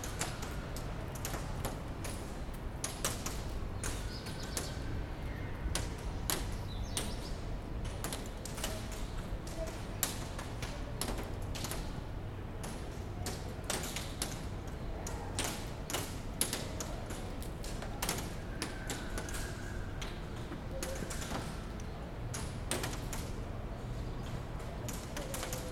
Nancy, France - After the rain